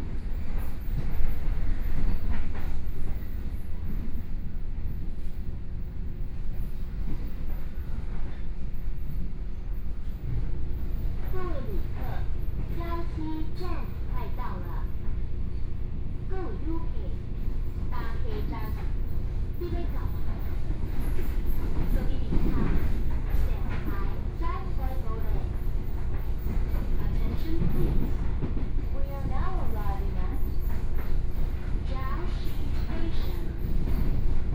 Jiaosi Township, Yilan County - Local Train
from Yilan Station to Jiaoxi Station, Binaural recordings, Zoom H4n+ Soundman OKM II
7 November 2013, ~14:00